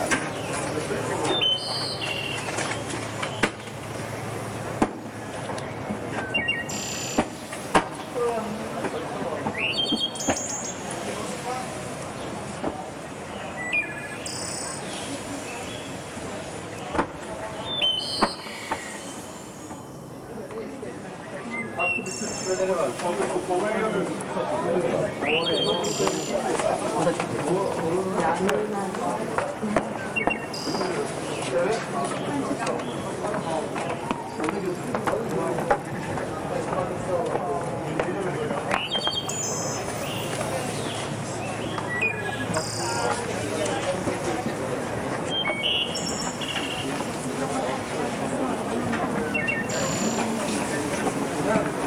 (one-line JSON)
{"title": "Ernst-Reuter-Platz, Monheim am Rhein, Deutschland - Listen Ernst Reuter Platz - excerpt of world listening day 22", "date": "2022-07-18 17:00:00", "description": "A project in cooperation wth Radio Rakete - the internet radio of Sojus 7.\nsoundmap nrw - topographic field recordings and social ambiences", "latitude": "51.09", "longitude": "6.89", "altitude": "43", "timezone": "Europe/Berlin"}